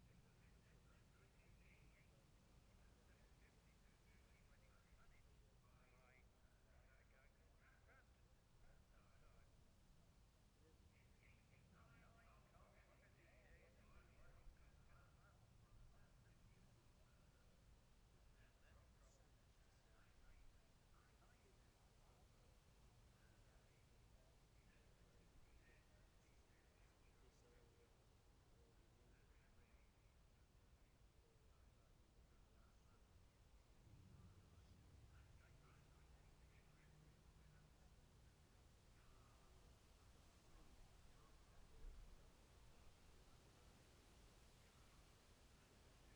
Jacksons Ln, Scarborough, UK - Gold Cup 2020 ...
Gold Cup 2020 ... 600 odds then 600 evens practice ... Memorial Out ... dpa 4060s to Zoom H5 ...
11 September 2020, 12:26